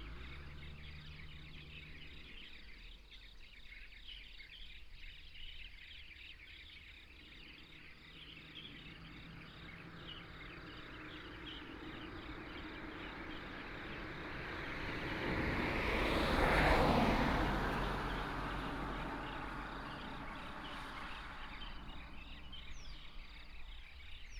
獅子鄉南迴公路, Shizi Township, Pingtung County - Beside the road
Beside the road, Traffic sound, Bird call